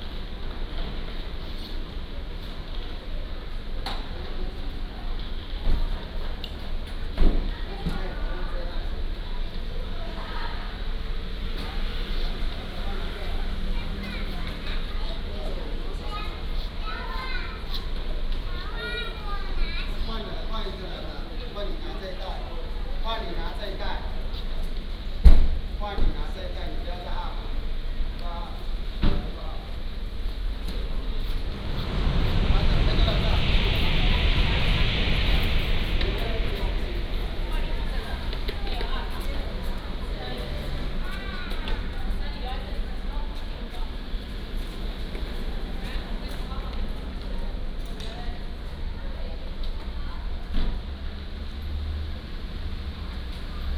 THSR Yunlin Station, Huwei Township - Close the door
Close the door, Traffic sound, Outside the station, Very much docked vehicles
31 January, 11:03